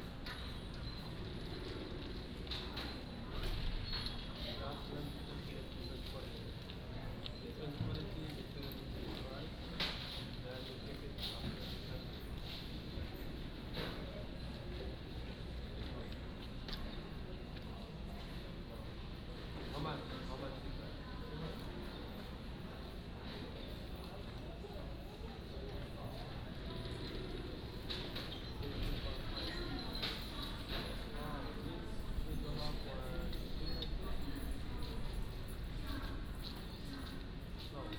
National Chi Nan University, 埔里鎮 - In the school's Square
In the school's Square